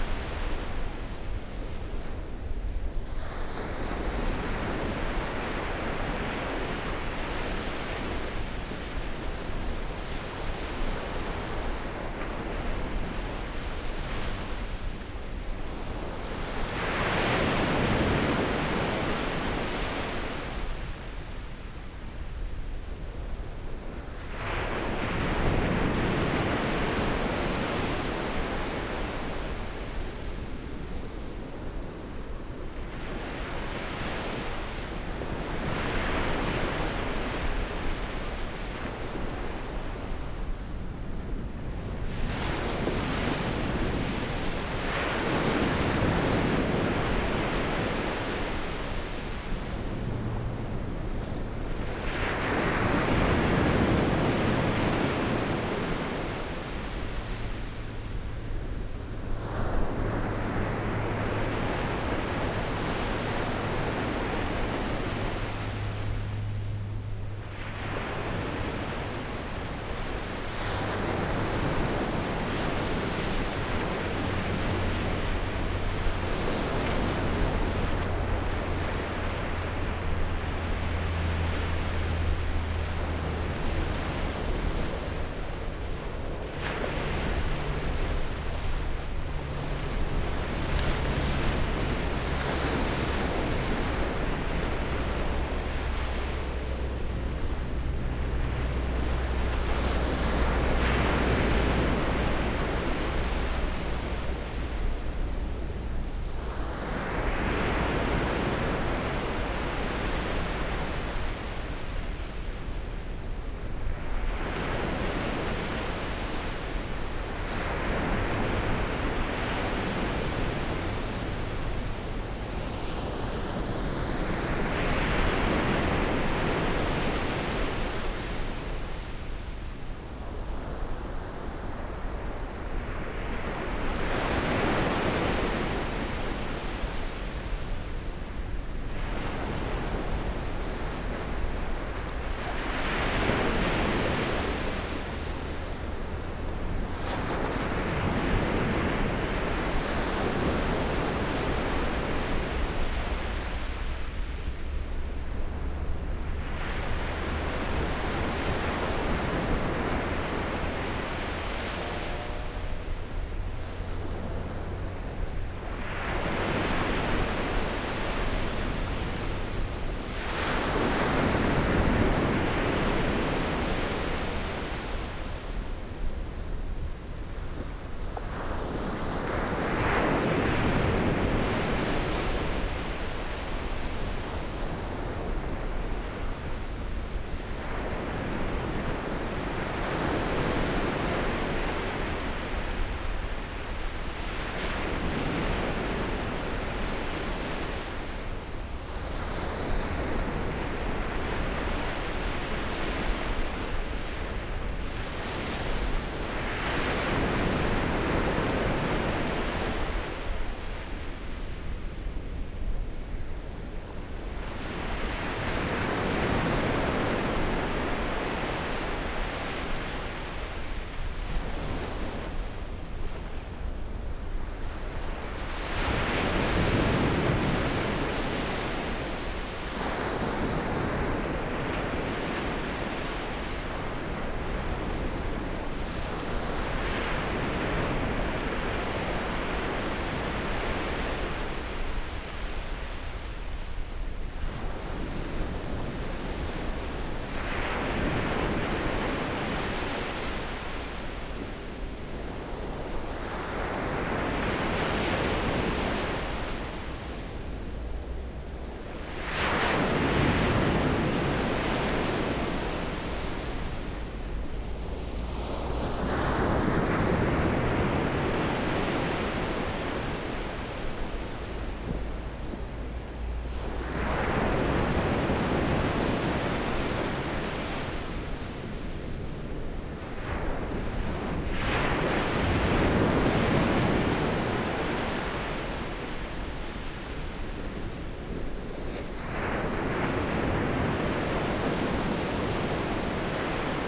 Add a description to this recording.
On the beach, Sound of the waves, Zoom H6 +Rode NT4